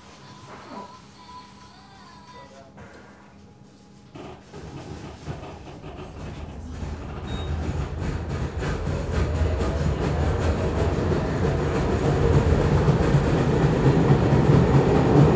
Poznan, PST line - line 12
riding downtown on a renovated tram. although the shell has been modernized the frame is a few dozen years old and still makes rattling and rumbling sounds while riding. especially at gaining speed and breaking. pneumatic doors also make interesting creaking sound.
Poznan, Poland, 19 June 2014